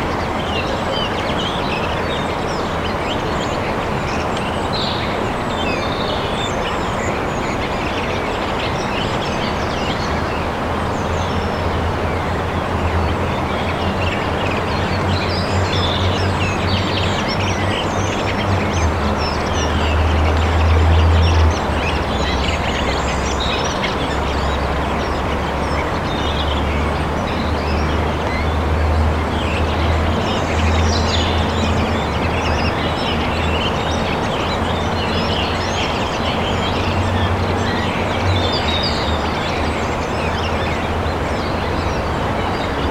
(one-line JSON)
{"title": "erkrath, neandertal, waldatmo am hang", "description": "mittags am berghang im laubwald - hören in das tal - leichte winde, vogelstimmen, vereinzelte tierlaute\nsoundmap nrw:\nsocial ambiences/ listen to the people - in & outdoor nearfield recordings", "latitude": "51.22", "longitude": "6.95", "altitude": "100", "timezone": "GMT+1"}